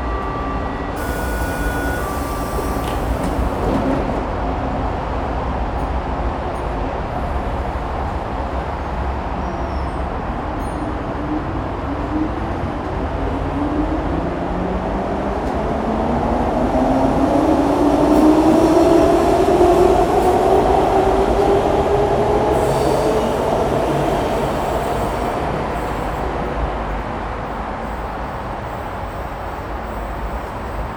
Westend, Berlin, Germany - Berlin's loudest sonic place?
This S-Bahn station sits in the middle of 2 motorways - the busiest route in and out of the city. Waiting there one is constantly surrounded and immersed in traffic. Sometimes you can't even hear the trains arriving. The Berlin Senate's publication on city noise describes this as Berlin's noisiest spot.
4 November 2014, 12:51